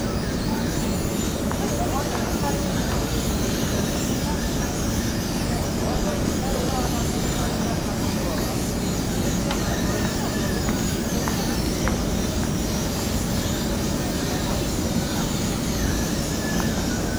squeak og moving parts of the luggage belt. (sony d50)